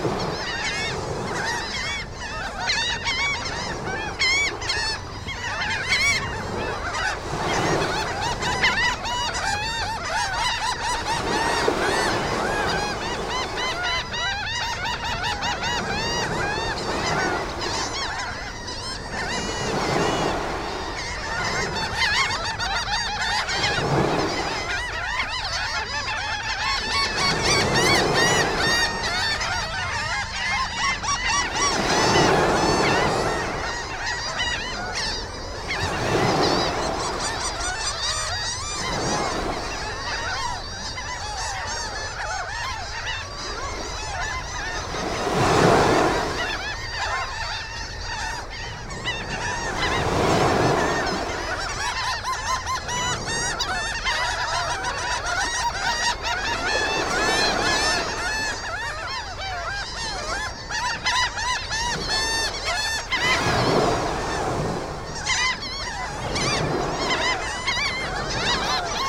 Cumberland County, NJ, USA - migratory shorebirds
Delaware Bay location (Fortescue, NJ); a sectioned off (protected)beach area for birds migrating up the eastern coast of the USA.
22 May 2017, ~17:00